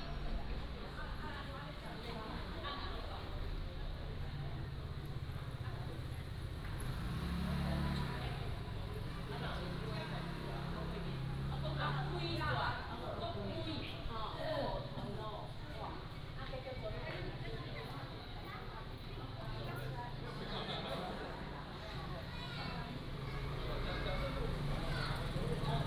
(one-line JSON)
{"title": "Kinmen Military Headquarters of Qing Dynasty, Taiwan - In front of the traditional architecture", "date": "2014-11-02 18:50:00", "description": "In the street, In front of the traditional architecture, Traffic Sound", "latitude": "24.43", "longitude": "118.32", "altitude": "15", "timezone": "Asia/Taipei"}